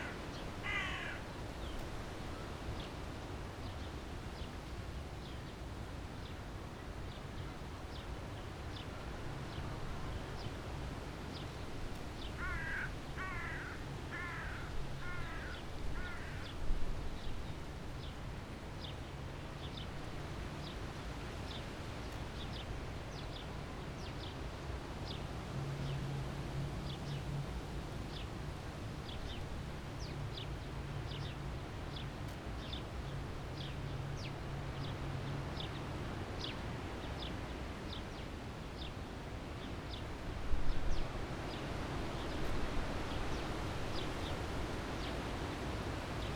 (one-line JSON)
{"title": "Tempelhofer Feld, Berlin, Deutschland - wind in poplar trees", "date": "2017-05-01 12:55:00", "description": "place revisited, nice wind in the poplars\n(SD702, S502ORTF)", "latitude": "52.48", "longitude": "13.40", "altitude": "42", "timezone": "Europe/Berlin"}